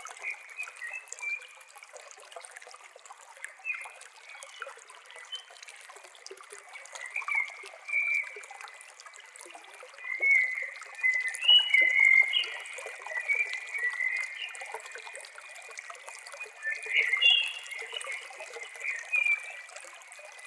Podmelec, Most na Soči, Slovenia - Simple Valley Stream Water Sounds and Bird Singing
Field recording in the valley of pure stream water sounds and bird singing.